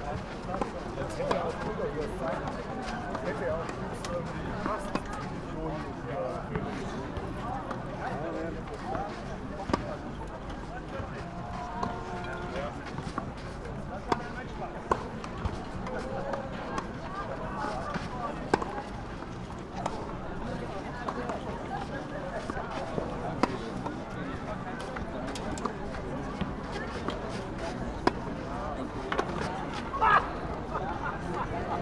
Gleisdreieck, Köln - rail triangle, field ambience
Köln, Gleisdreieck, things heard on the terrace, slightly different perspective.
(Sony PCM D50 internal mics)
Köln, Germany